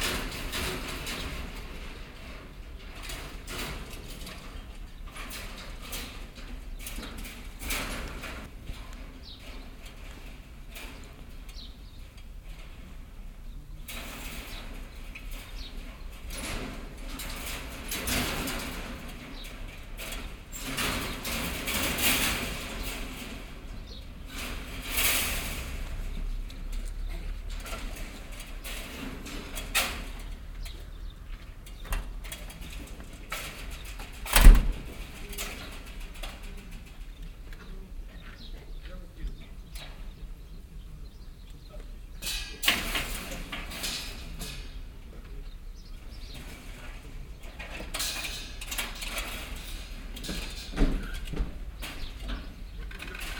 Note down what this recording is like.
beladen eines pickups mit eisen von einer baustelle, nachmittags, fieldrecordings international: social ambiences, topographic fieldrecordings